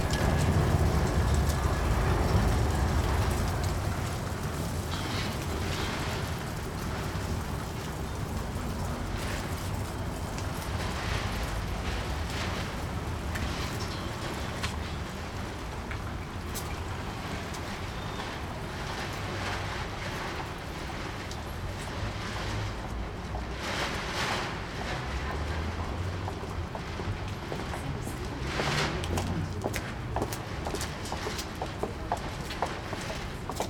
weinbergsweg, wind
17.03.2009 20:25 wind in der fassadenabdeckung am gegenüber liegenden haus, blätter, schritte // wind in the facade coverage, leaves, steps
Berlin, Germany, 17 March 2009